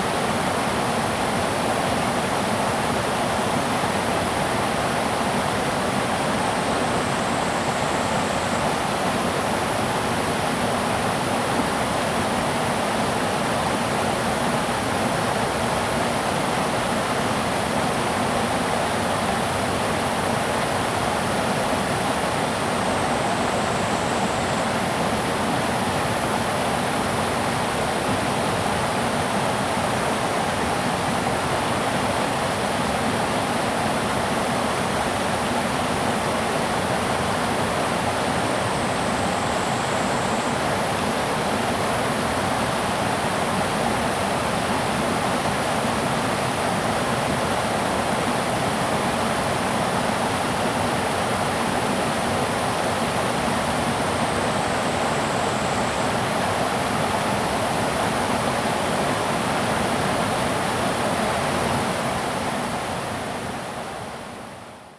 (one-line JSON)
{"title": "玉門關, 種瓜坑, Puli Township - small waterfall", "date": "2016-05-18 13:57:00", "description": "streams, small waterfall\nZoom H2n MS+ XY", "latitude": "23.96", "longitude": "120.89", "altitude": "420", "timezone": "Asia/Taipei"}